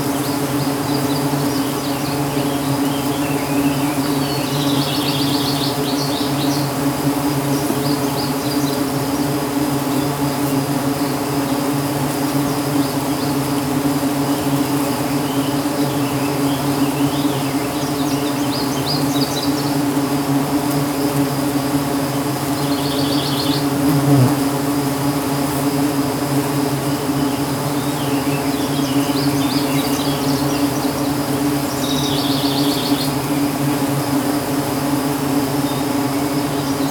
South Frontenac, ON, Canada - Black Locust tree with bees & birds
An enormous black locust tree covered in white blossoms, being enjoyed by thousands of bees. Zoom H2n underneath tree, facing upwards. Birds sing. An airplane passes. Screen doors are heard in the distance.
Battersea, ON, Canada, 4 June 2016